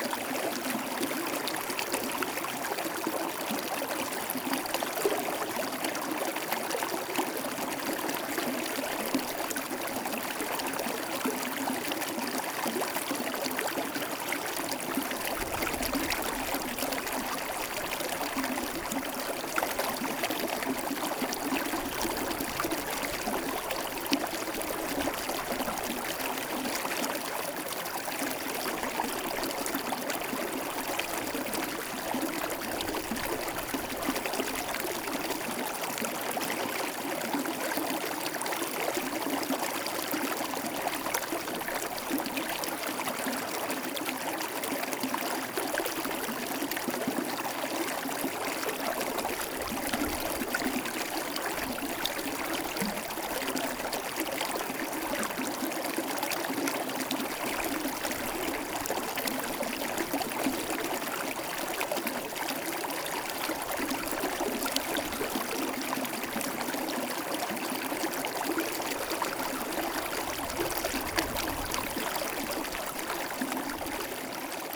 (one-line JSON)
{"title": "Le Pont-de-Montvert, France - Tarn spring", "date": "2016-04-28 08:00:00", "description": "The Lozere Mounts. This is the Tarn spring, a few meters after its emergence.", "latitude": "44.42", "longitude": "3.81", "altitude": "1565", "timezone": "Europe/Paris"}